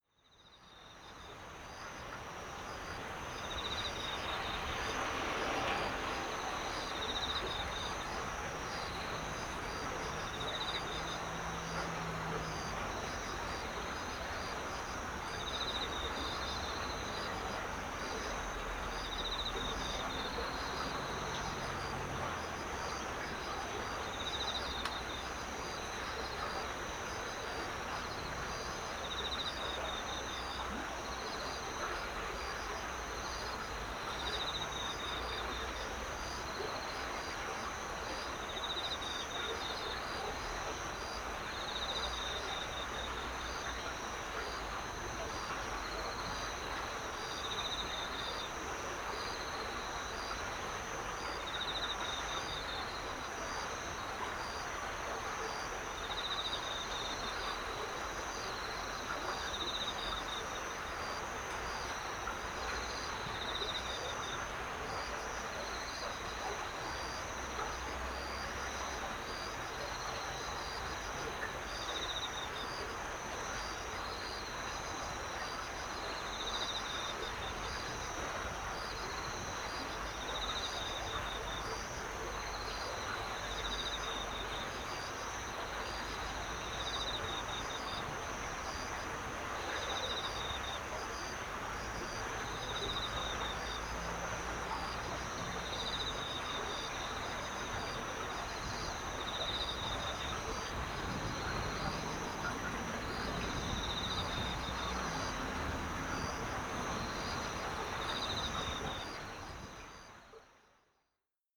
Yeoeui-cheon Stream, Cricket Chirping
여의천 물살, 풀벌레
서울, 대한민국, 24 September 2019, ~17:00